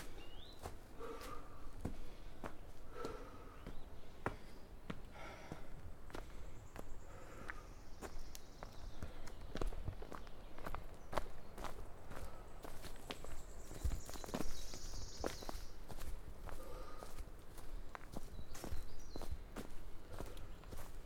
Alléves, France - Marche souterraine
Marche vers la sortie dans la grotte de Banges, changement permanent de l'acoustique suivant la forme des lieux.